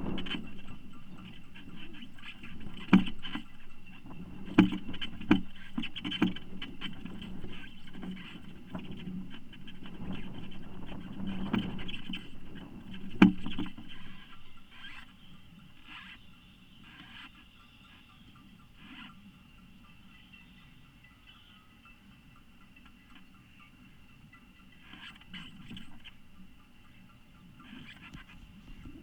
stormy day (force 7-8), contact mic on lifebuoy box
the city, the country & me: june 13, 2013